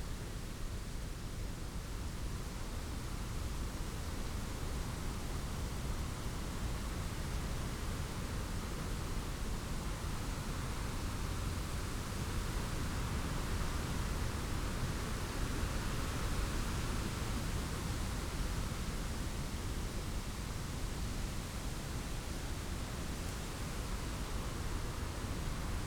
Unnamed Road, Malton, UK - if you go down to the woods ...
If you go down to the woods ... on a BTO one point tawny owl survey ... lavalier mics clipped to sandwich box ... wind through trees ... occasional passing vehicles ... pheasant calls early on ... not much else ...